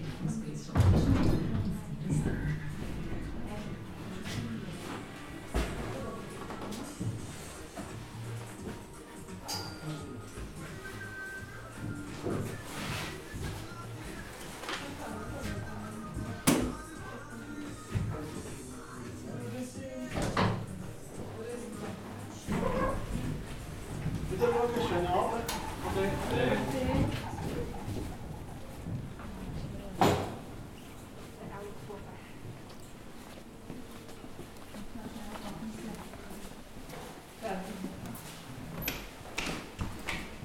Seilbahnankunft Lauchernalp
Ankunft der Seilbahn auf der Lauchernalp.
Wiler (Lötschen), Schweiz, 8 July 2011